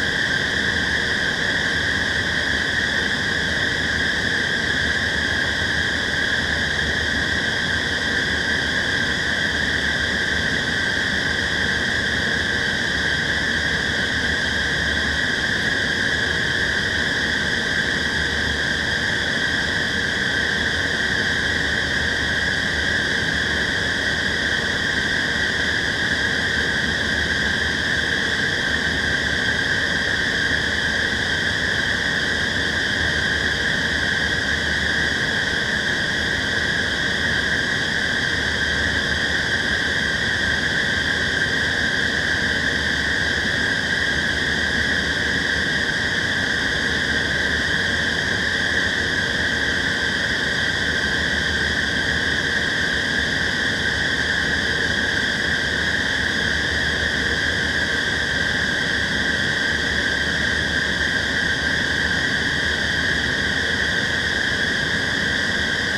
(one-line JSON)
{"title": "Königsbrücker Str., Dresden, Deutschland - MDR Luefter5", "date": "2020-09-29 00:37:00", "description": "5 screeching fans in front of the MDR radio & television\nrecording with Zoom H3 VR", "latitude": "51.08", "longitude": "13.76", "altitude": "132", "timezone": "Europe/Berlin"}